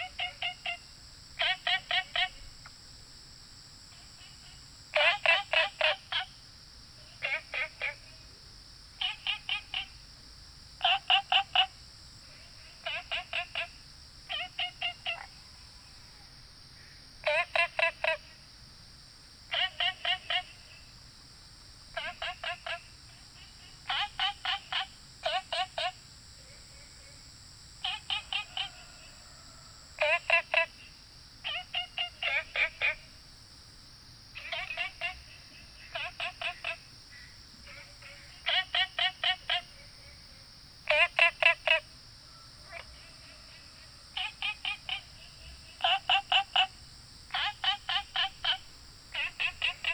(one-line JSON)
{"title": "青蛙ㄚ 婆的家, Taomi Ln., Puli Township - Frogs chirping", "date": "2015-08-12 04:56:00", "description": "Frogs chirping, Insects called, Small ecological pool", "latitude": "23.94", "longitude": "120.94", "altitude": "463", "timezone": "Asia/Taipei"}